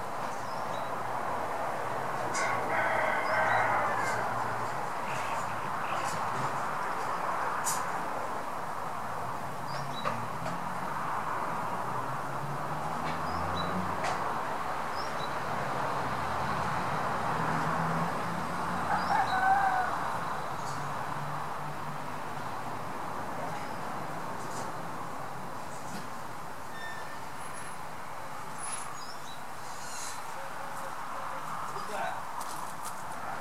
Fonsorbes, France - Garden sounds in peri-urban areas
Between road and gardens the sounds of my district in the twilight